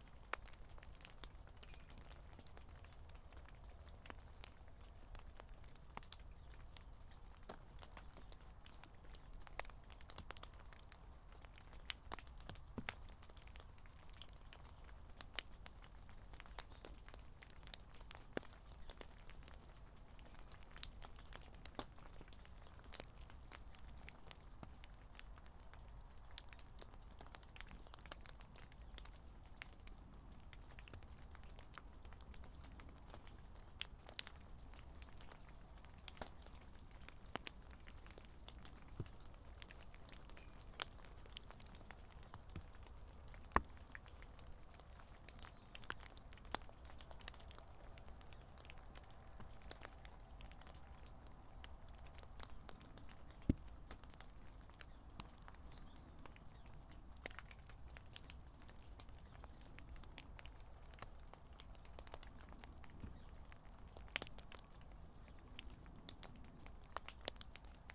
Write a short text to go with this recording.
Following a misty, drizzly morning recorded rain drops falling from an Oak tree onto damp ground. Some higher pitched sounds are drops falling onto a metal gate. Tascam DR-05 built-in microphone.